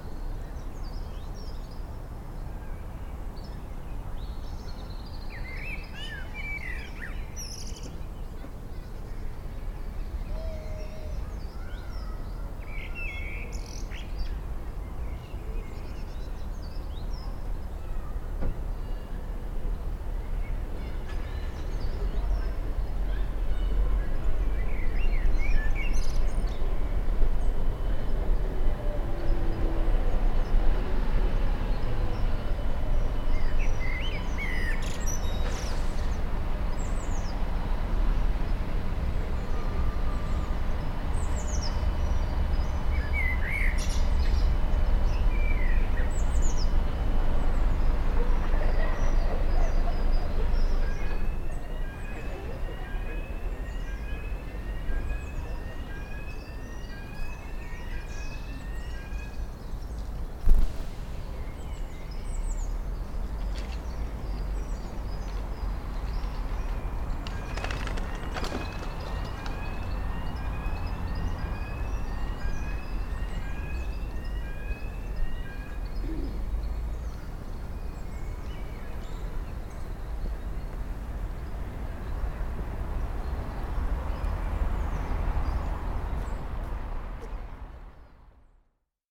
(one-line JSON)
{"title": "George IV park, Edinburgh, Edinburgh, UK - Blackbird singing to the park", "date": "2016-03-22 12:10:00", "description": "Strolling towards the park with my buddy Louise, I heard the loveliest Blackbird song drifting down from the roof of the building beside the park. There were some nice noticeboards around, explaining the history of the site which we stood and read, while listening to the lovely birdsong.", "latitude": "55.96", "longitude": "-3.20", "altitude": "22", "timezone": "Europe/London"}